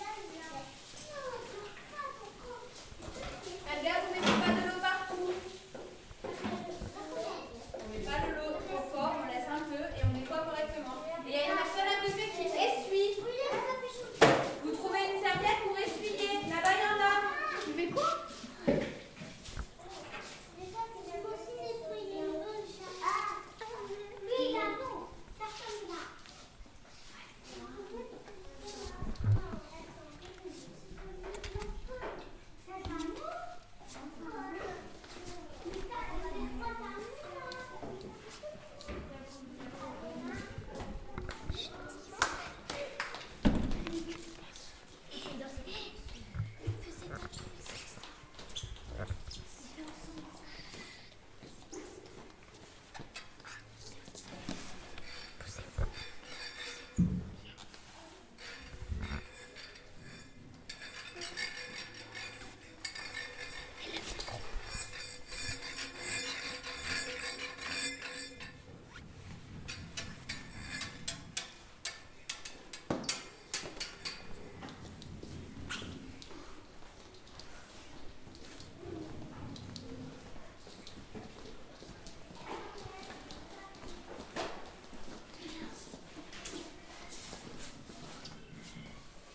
Ecole Ampère - Neudorf, Strasbourg, France - Children cooking at school
Some children are preparing cake at an after school program.
7 November 2016